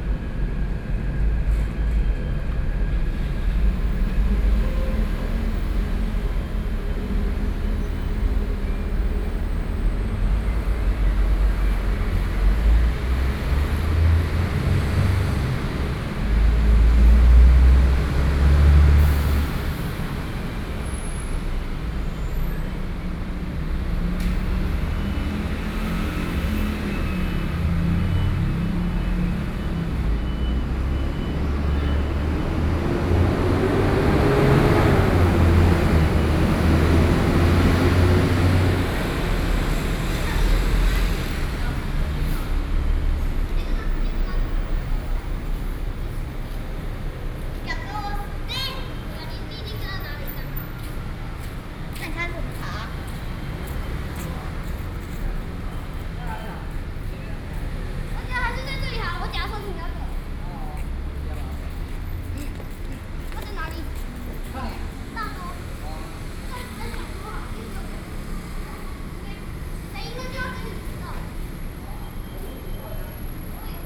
Beitou, Taipei - Below the track
Commuting time, Sony PCM D50 + Soundman OKM II